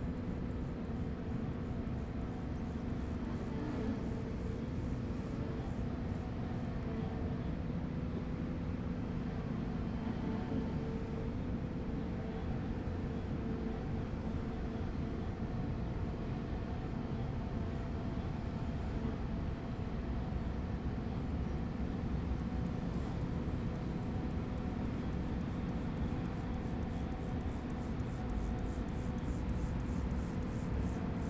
Berlin Wall of Sound, factory at Teltowkanal Rudow 080909